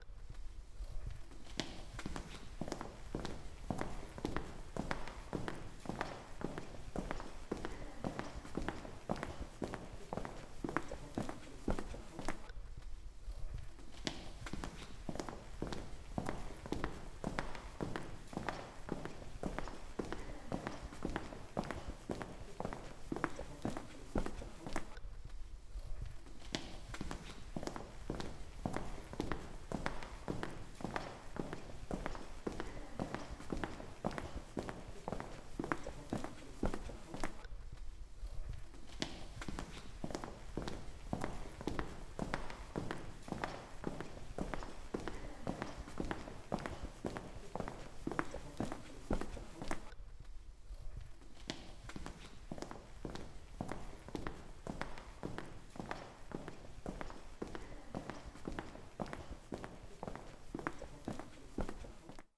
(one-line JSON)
{"title": "Ecole d'Art de Saint-Nazaire, France - Intercours", "date": "2015-11-09 19:00:00", "description": "Prise sonore d'un élève marchant d'un cours à un autre à l'Ecole d'Art", "latitude": "47.27", "longitude": "-2.21", "altitude": "13", "timezone": "Europe/Paris"}